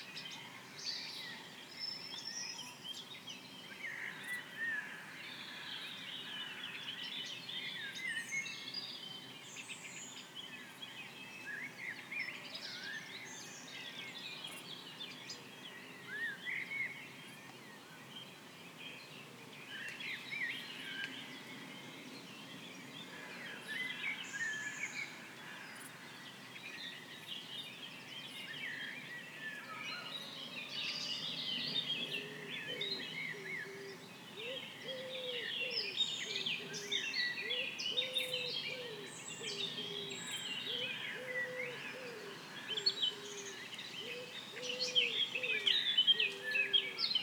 13 minutes from 5:15 (UTC+2) of dawn chorus. The Fond du Loup is a wooded area on a small stream tributary of the Vesdre river in Chaudfontaine, Belgium. Recorded on a Sony PCM-A10 with a pair of LOM Usi microphones (Primo LM-172).
Road and railroad traffic in the background. Noise of cargo aircrafts taking off Liège (LGG) at 8.3 NM left out.